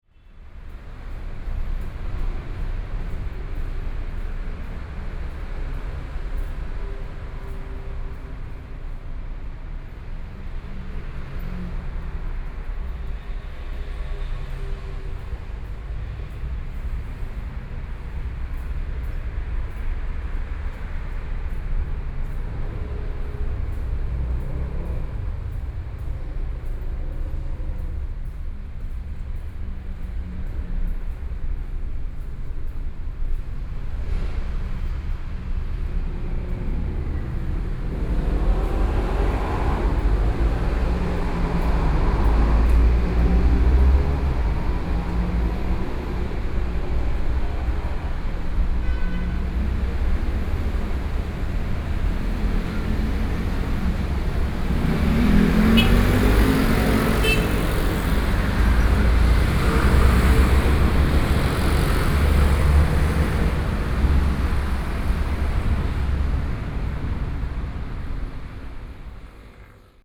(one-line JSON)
{"title": "Minzu E. Rd., Taipei City - Underpass", "date": "2014-05-05 14:33:00", "description": "In the underpass, Traffic Sound", "latitude": "25.07", "longitude": "121.52", "altitude": "10", "timezone": "Asia/Taipei"}